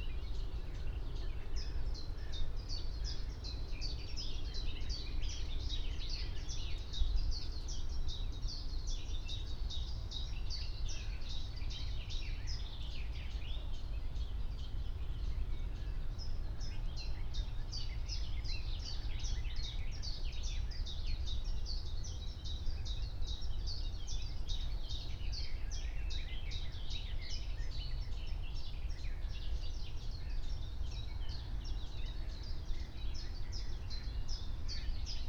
04:30 Berlin, Wuhletal - Wuhleteich, wetland
Deutschland, 17 June 2021